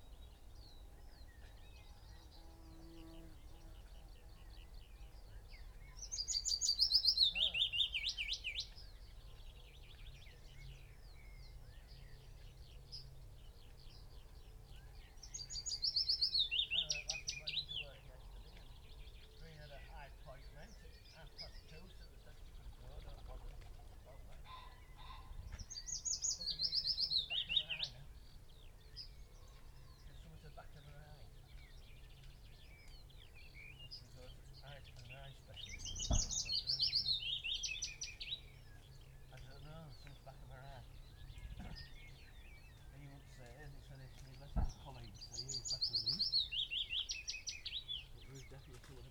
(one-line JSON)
{"title": "Green Ln, Malton, UK - willow warbler song ...", "date": "2021-05-11 05:47:00", "description": "willow warbler song ... dpa 4060s clipped to bag wedged in the fork of a tree to Zoom H5 ... bird calls ... song ... from ... magpie ... wood pigeon ... pheasant ... wren ... blackbird ... dunnock ... skylark ... blackcap ... yellowhammer ... red-legged partridge ... linnet ... chaffinch ... lesser whitethroat ... crow ... an unattended extended unedited recording ... background noise ... including the local farmer on his phone ...", "latitude": "54.12", "longitude": "-0.57", "altitude": "96", "timezone": "Europe/London"}